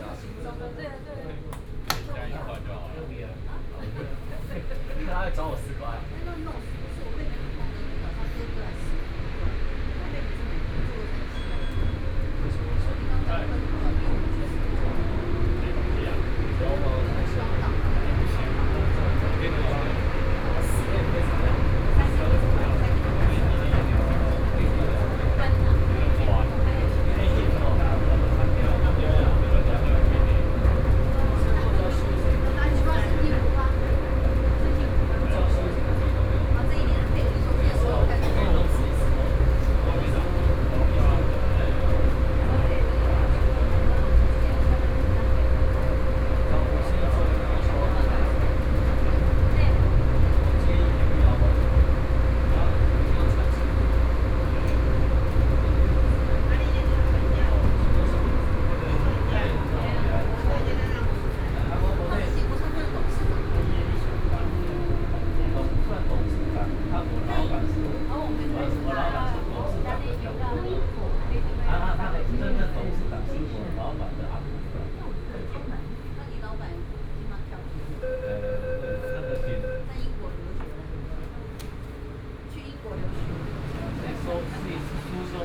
Neihu District, Taipei - Neihu Line (Taipei Metro)
from Xihu Station to Huzhou Station, Binaural recordings, Sony PCM D50 + Soundman OKM II
31 October 2013, ~17:00